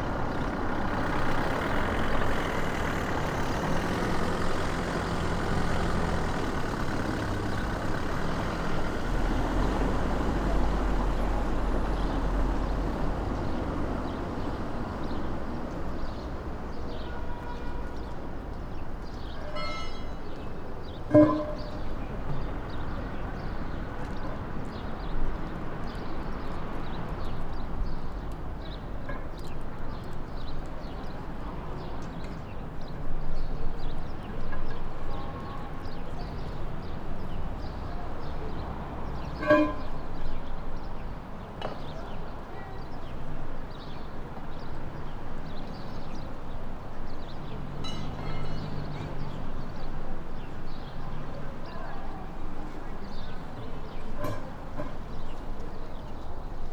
Acoustic surroundings of Templo Diana, June 2006, AKG MS setup, Canford preamp, microtrack 2496
Evora, Portugal, 13 June 2007, 14:51